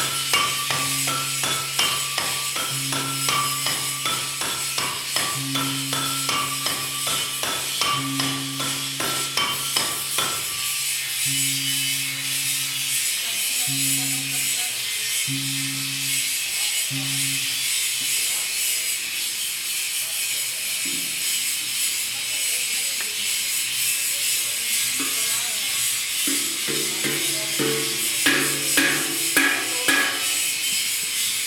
Gamelan Factory - Solo, Laban, Kec. Mojolaban, Kabupaten Sukoharjo, Jawa Tengah 57554, Indonésie - Gamelan Forge
Solonese workers in a Gamelan Forge - grinding, tuning and hammering Gongs.
Jawa Tengah, Indonesia